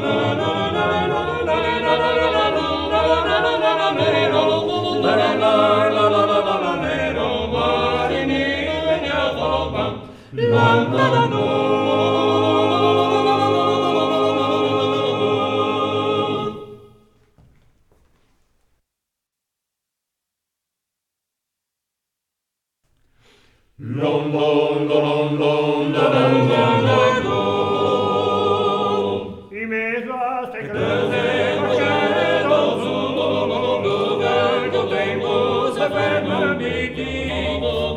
A session of Trallalero, polyphonic chant from Genoa, played by I Giovani Canterini di SantOlcese, a trallalero group. Trallalero is a five-voices chant, without instruments.
Sant'Olcese Chiesa GE, Italy, November 2014